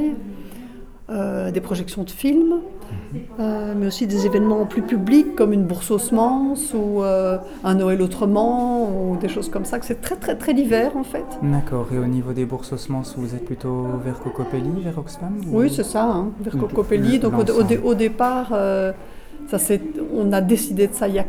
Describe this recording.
In Louvain-La-Neuve, there's a place called sustainable development house. This is a completely free access area where people can find various informations about environmental thematic. Books, workshops, seed, permaculture, there's a wide variety of goals. Completely in the heart of Louvain-La-Neuve, below an amphitheater, this house is a welcoming place. Aline Wauters explains us what is this special place and what can be found there.